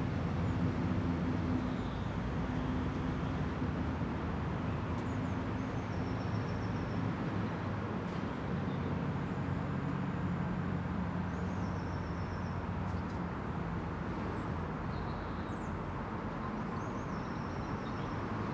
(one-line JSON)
{"title": "Contención Island Day 77 inner southwest - Walking to the sounds of Contención Island Day 77 Monday March 22nd", "date": "2021-03-22 10:30:00", "description": "The Drive Moor Crescent High Street\nBaskets bulging the honeybees are dusted yellow with willow pollen\nIn the dip road sounds pass above me a robin sings\nThe ground is wet mud and puddles from rain and melting snow", "latitude": "55.00", "longitude": "-1.62", "altitude": "67", "timezone": "Europe/London"}